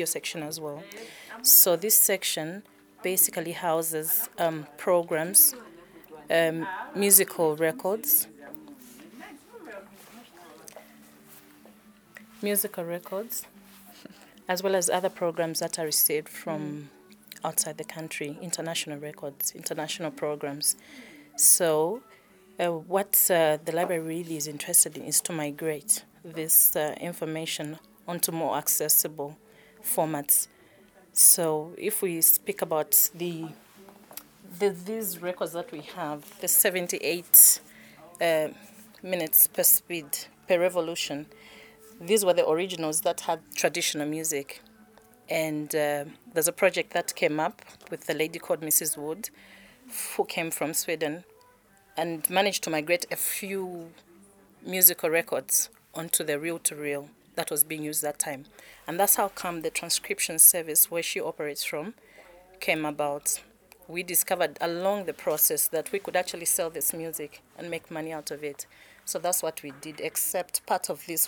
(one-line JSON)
{"title": "Mass Media Centre, ZNBC, Lusaka, Zambia - Rich cultural information...", "date": "2012-07-19 16:00:00", "description": "… continuing our archive conversations…. Mrs. Martha Chitalunyama, senior information resource officer, adds details about the content, transcription and publication practices of the ZNBC archives. For example, the video publications of ceremonies can often be accompanied by audio CDs of early recordings with Zambian artists from the archive’s vinyl collection. Broadcast technology was digitalized about 10 years ago leaving much of the archive’s cultural heritage currently unaired. Transcription services are slow with only one record player, which is in the dubbing studio, and thus, public access to the rich history of Zambian music and recordings remains a trickle. A large archive of spoken word recordings including traditional storytelling remains entirely untouched by transcriptions. There is as yet no online reference nor catalogue about these rich cultural resources.\nThe entire playlist of recordings from ZNBC audio archives can be found at:", "latitude": "-15.41", "longitude": "28.32", "altitude": "1265", "timezone": "Africa/Lusaka"}